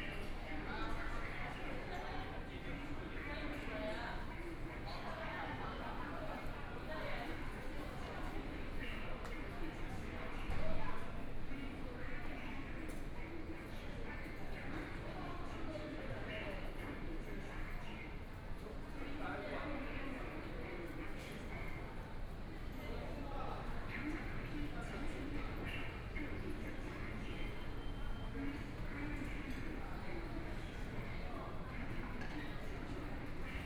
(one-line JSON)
{"title": "East Nanjing Road Station, Shanghai - walking in the Station", "date": "2013-11-21 16:17:00", "description": "From the station platform to lobby, Escalator noise, Messages broadcast station, Out of the station to the station exit direction, Binaural recording, Zoom H6+ Soundman OKM II", "latitude": "31.24", "longitude": "121.48", "altitude": "10", "timezone": "Asia/Shanghai"}